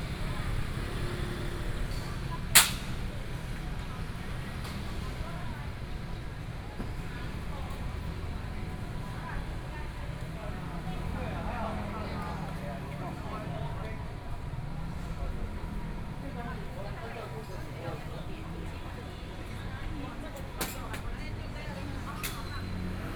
左營區果峰里, Kaohsiung City - In the bazaars and markets
In the bazaars and markets within the community, Traffic Sound, The weather is very hot